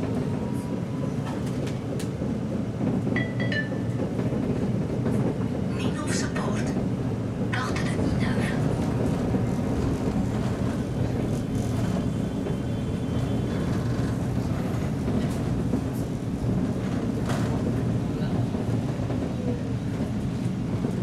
Tram, Brussel, Belgium - Tram 51 between Parvis de Saint-Gilles and Porte de Flandre
Underground until Lemonnier Station.
Tech Note : Olympus LS5 internal microphones.
Région de Bruxelles-Capitale - Brussels Hoofdstedelijk Gewest, België / Belgique / Belgien, 23 May, ~09:00